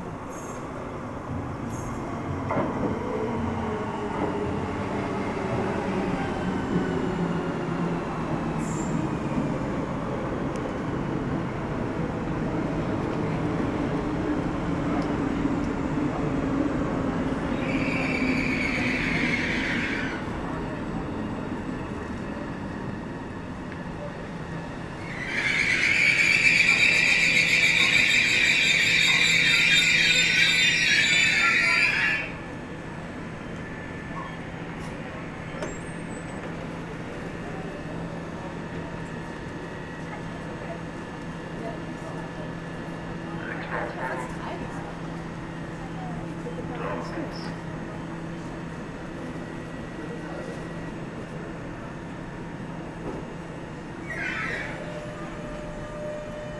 Malmö, Sweden - Malmö station
Waiting for the Öresund train in the Malmö station, and taking the train to Copenhagen.